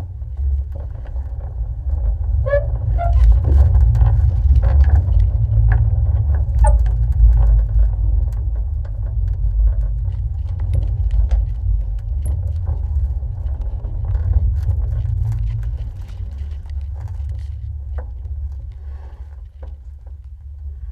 bunker tv antenna, Torun Poland
contact mic recording of an old tv antenna on top of a bunker